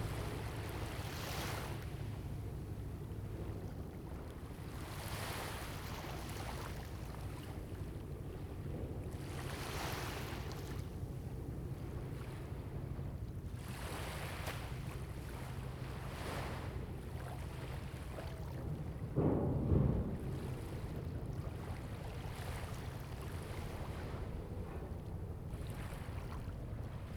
龍門村, Huxi Township - At the beach
At the beach, Sound of the waves
Zoom H2n MS +XY
21 October, 10:28